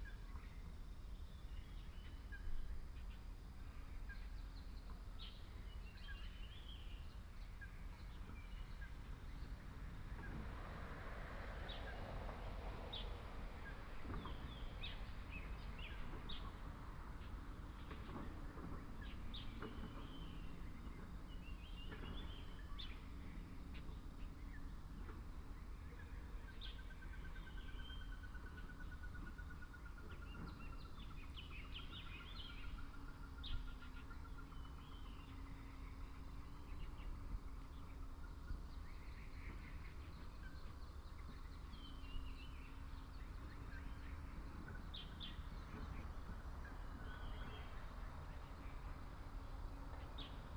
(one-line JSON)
{"title": "草楠濕地, Puli Township, Nantou County - in the wetlands", "date": "2016-03-27 09:06:00", "description": "in the wetlands, Bird sounds", "latitude": "23.95", "longitude": "120.91", "altitude": "584", "timezone": "Asia/Taipei"}